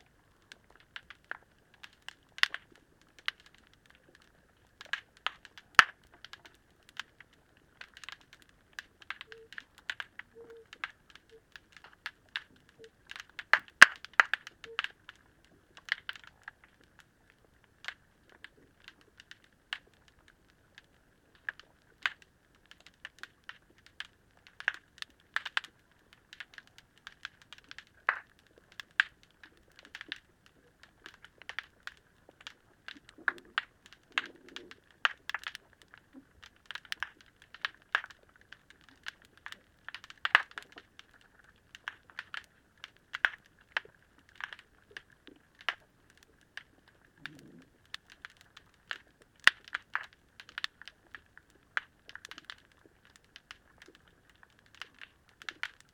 Rethymno, Crete, old harbour underwater
hydrophone in old harbour waters
Rethymno, Greece, 29 April 2019